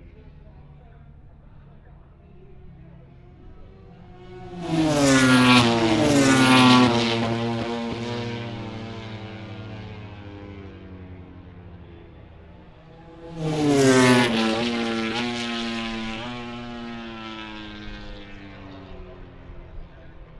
Silverstone Circuit, Towcester, UK - british motorcycle grand prix 2021 ... moto grand prix ...
moto grand prix qualifying one ... wellington straight ... olympus ls 14 integral mics ...